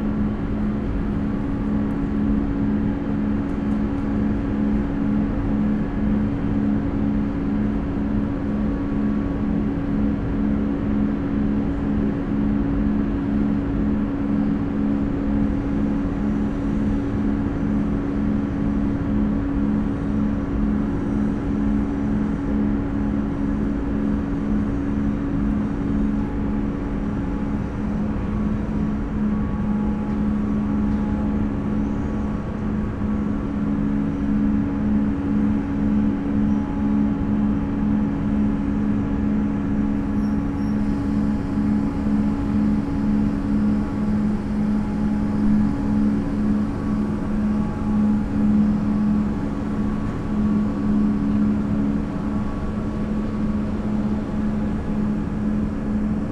Maribor, Einspielerjeva, flour mill - silo resonances
the various drones created by the machinery of the flour mill interfere at certain spots, in manifold pattern, audible all over the place.
(SD702, DPA4060)
Maribor, Slovenia, 29 May 2012